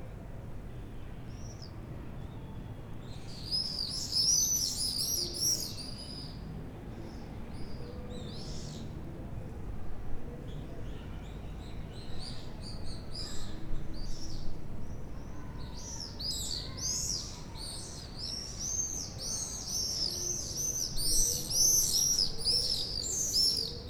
Khan al-Umdan, Acre - Khan al Umdan in Acre
Khan al Umdan in Acre